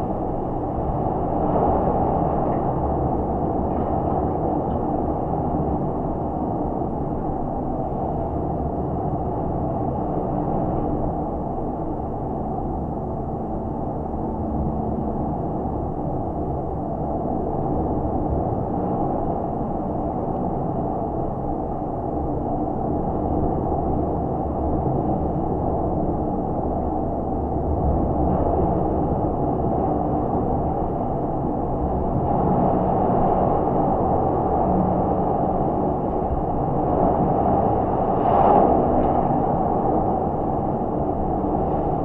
North Pier Light, South Haven, Michigan, USA - North Pier Light
Geophone recording from North Pier Light. Very windy. Waves crashing over pier.